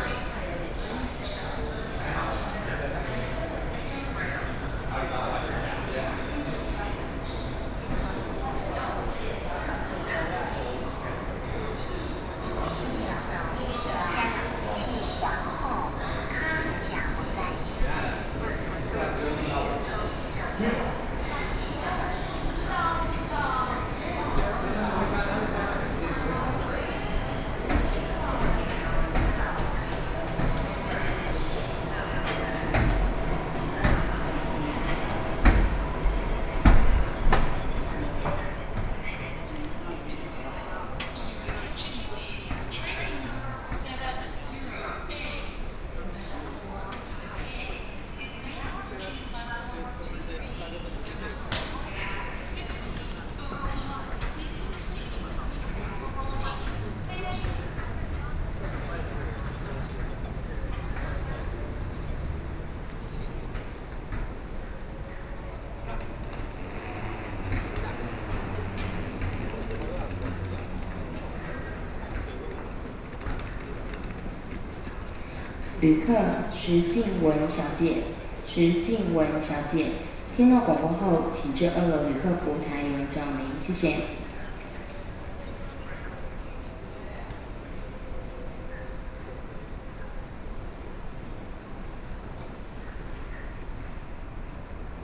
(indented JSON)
{
  "title": "THSR Taichung Station - Station hall",
  "date": "2013-10-08 15:54:00",
  "description": "from Station hall walking to Platform, Station broadcast messages, Sony PCM D50+ Soundman OKM II",
  "latitude": "24.11",
  "longitude": "120.62",
  "altitude": "31",
  "timezone": "Asia/Taipei"
}